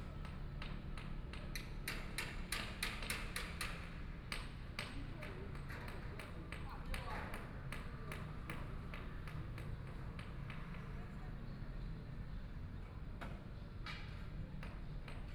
Dongshan Station, Yilan County - Morning town
Sitting in the square in front of the station, Homes under construction across the sound, Followed by a train traveling through, Binaural recordings, Zoom H4n+ Soundman OKM II